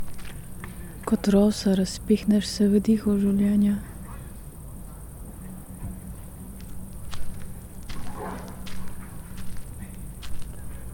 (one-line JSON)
{"title": "sonopoetic path, Maribor, Slovenia - walking poems", "date": "2012-08-25 21:18:00", "description": "walking poems, Mestni park, under maple", "latitude": "46.57", "longitude": "15.65", "altitude": "289", "timezone": "Europe/Ljubljana"}